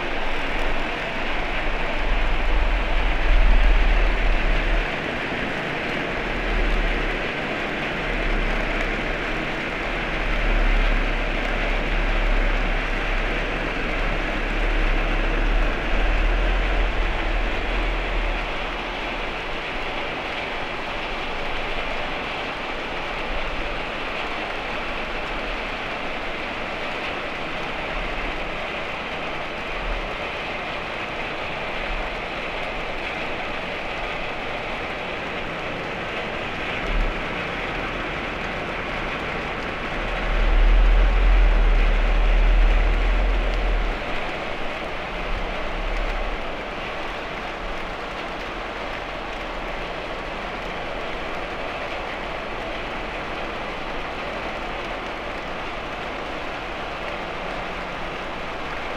Valparaíso, Chile - Hapag-Lloyd ship, Valparaíso coast
1 December, 12:35